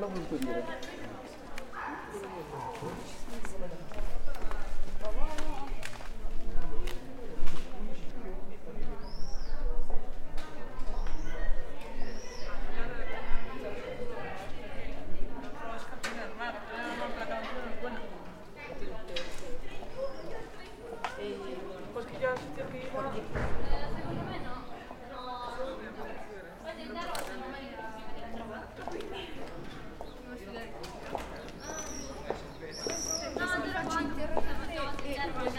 Perugia, Italia - under the Sciri tower
ambience of the street, workers, students walking and talking, birds
[XY: smk-h8k -> fr2le]
Perugia, Italy, 21 May 2014